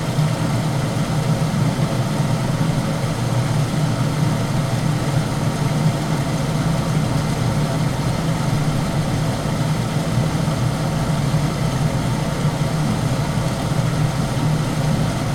mill air duct
the ahja river resonating inside a wooden air duct in a ruined mill in põlvamaa, southeast estonia. WLD, world listening day